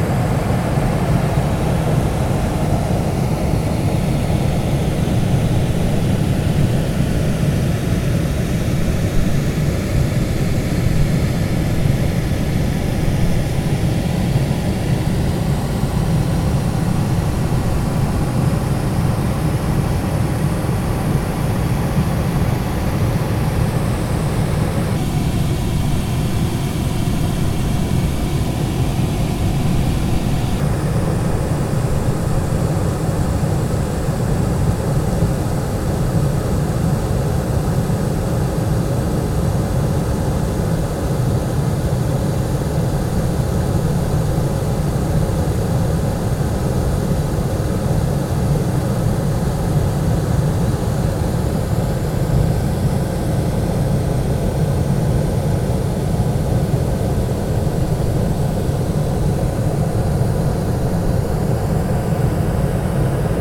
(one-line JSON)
{"title": "Koluszki, Poland - waterfall", "date": "2012-07-11 21:45:00", "description": "Zoom H4n, dam on the river Mroga.", "latitude": "51.78", "longitude": "19.81", "altitude": "180", "timezone": "Europe/Warsaw"}